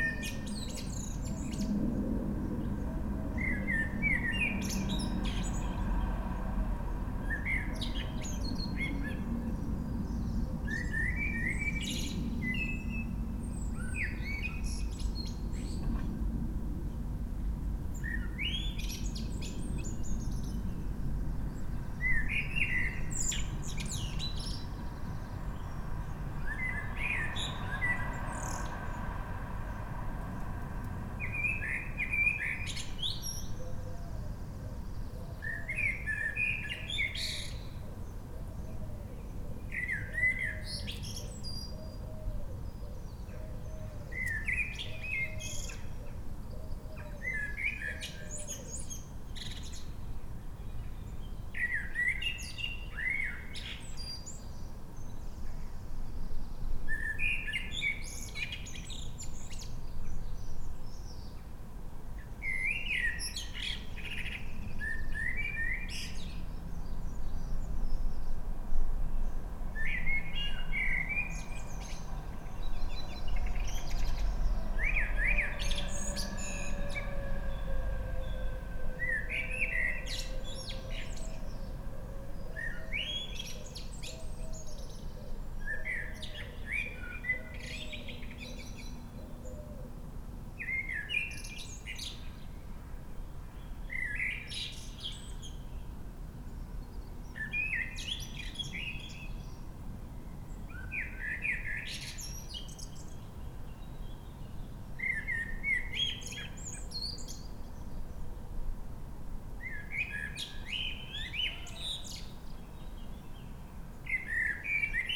A blackbird that has woken us up most mornings this spring with his beautiful early-morning song.